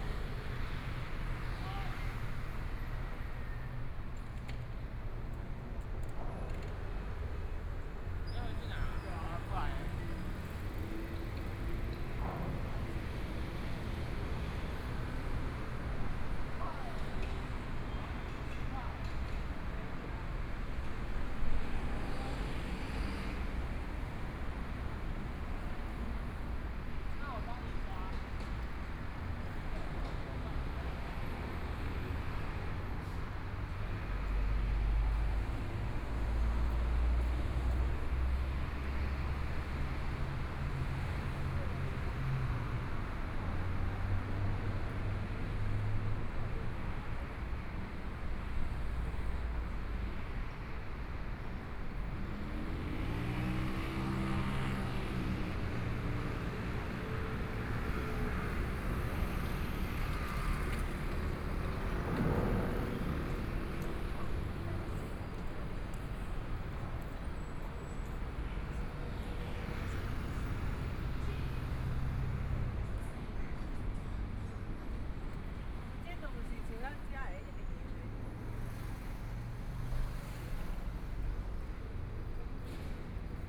Walking towards the west direction, From the pedestrian street with tourists, Traffic Sound, Motorcycle sound
Binaural recordings, ( Proposal to turn up the volume )
Zoom H4n+ Soundman OKM II